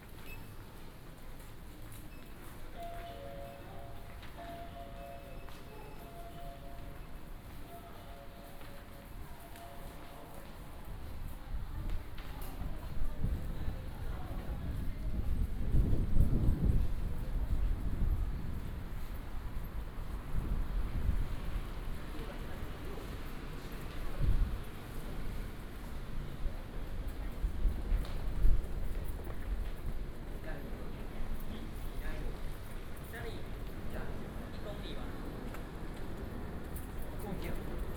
From the station platform to export direction
Xinfeng Township, Hsinchu County, Taiwan, 7 February 2017, ~13:00